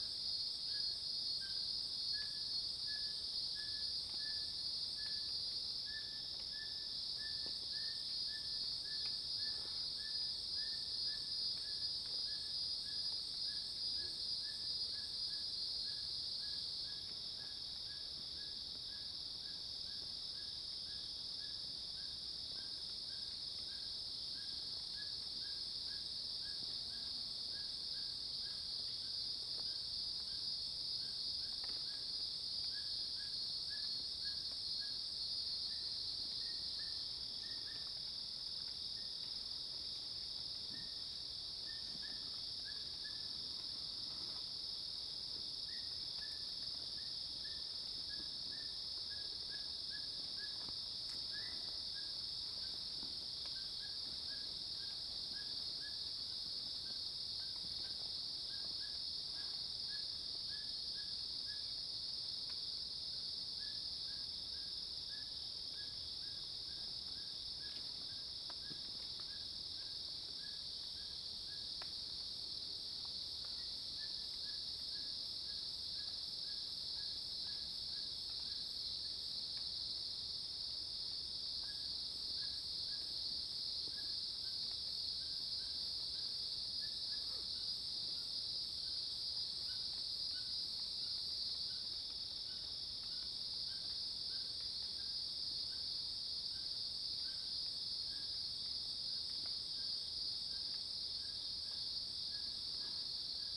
Insects called, Birds call, Cicadas cries, Dog barking
Zoom H2n MS+XY